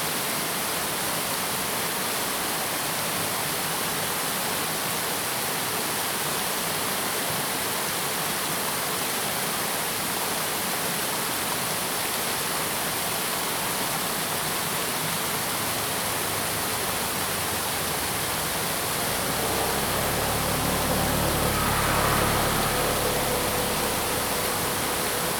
茅埔坑溪, 埔里鎮桃米里 - The sound of water streams
Insects sounds, The sound of water streams
Zoom H2n MS+XY